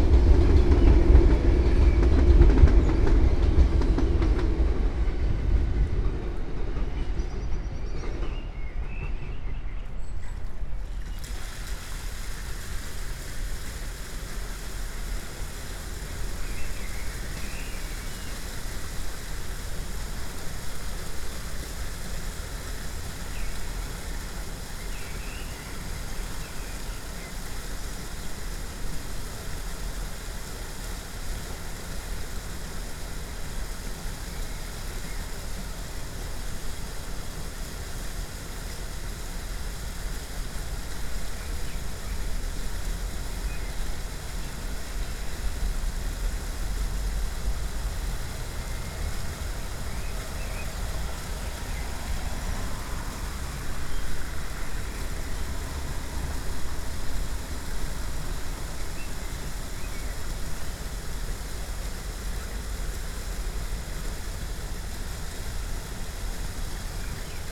Voetgangersbrug Trekvlietplein Bontekoekade, Trekvlietplein, Den Haag, Netherlands - Trekvlietplein canal footbridge, Den Haag
Trekvlietplein canal footbridge, Den Haag